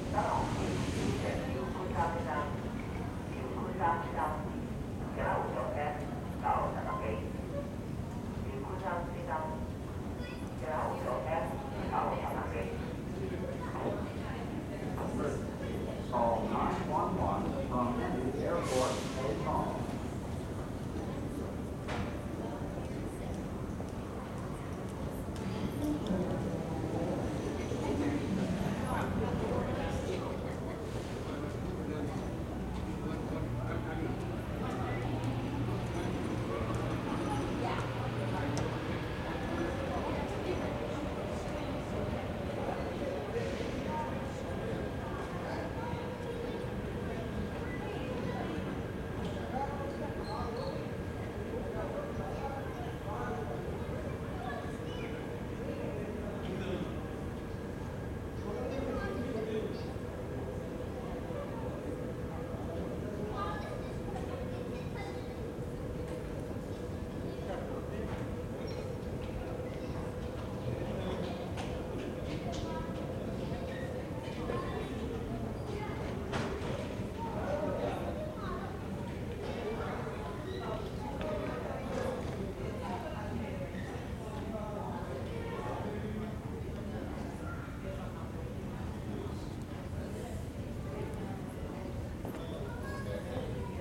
South Satellite, SeaTac Airport - SeaTac #1
Seattle-Tacoma International Airport, downstairs at the entrance to the South Satellite shuttle subway. I never liked the way the Muzak keeps seeping in.
King County, Washington, United States of America, 19 December 1998